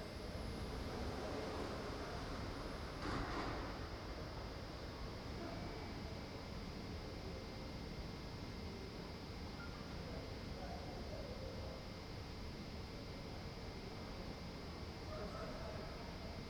Ascolto il tuo cuore, città, I listen to your heart, city. Several chapters **SCROLL DOWN FOR ALL RECORDINGS** - Evening, first day of students college re-opening in the time of COVID19 Soundscape
"Evening, first day of students college re-opening in the time of COVID19" Soundscape
Chapter CXXII of Ascolto il tuo cuore, città. I listen to your heart, city
Tuesday, September 1st, 2020, five months and twenty-one days after the first soundwalk (March 10th) during the night of closure by the law of all the public places due to the epidemic of COVID19.
Start at 10:36 p.m. end at 11:29 p.m. duration of recording 52’51”
The student's college (Collegio Universitario Renato Einaudi) opens on this day after summer vacation.
Piemonte, Italia, 1 September 2020, ~23:00